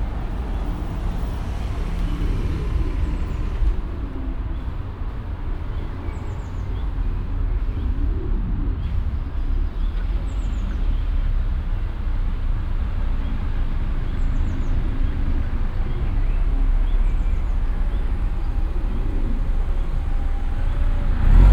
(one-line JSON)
{"title": "Frillendorf, Essen, Deutschland - essen, am schacht hubert, traffic drillground", "date": "2014-04-18 12:30:00", "description": "An einem Verkehrsübungsplatz. Die Klänge von startenden Fahrzeugen, das Öffnen und Schliessen von Türen, Vorbeifahrt langsamer PKW's an einem windigen, milden Frühjahrstag.\nProjekt - Stadtklang//: Hörorte - topographic field recordings and social ambiences", "latitude": "51.46", "longitude": "7.05", "altitude": "101", "timezone": "Europe/Berlin"}